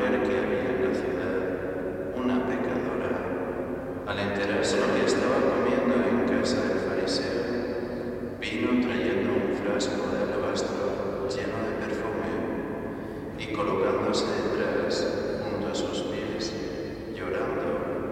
Calle Santo Domingo, Santo Domingo de Silos, Burgos, Spain - Misa de Monesterio de Santo Domingo de Silos, 1
Excerpt from a morning service performed by Gregorian monks at the Monesterio de Santo Domingo de Silos, in the Picos d'Urbión, Spain.
Covarrubias, Castilla y León, España, 17 September 2020